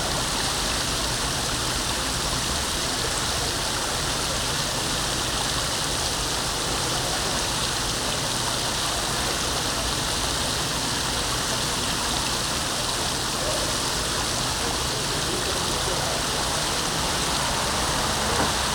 Orléans, fontaine Place albert 1er
Fontaine Place Albert 1er, Orléans (45-France)
France, 6 May 2011